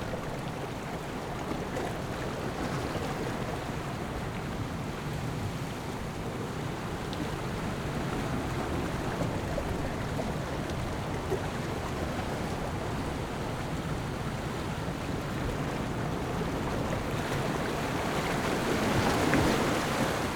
朗島村, Ponso no Tao - On the coast
On the coast, Sound of the waves
Zoom H6+Rode NT4
Lanyu Township, Taitung County, Taiwan, 29 October 2014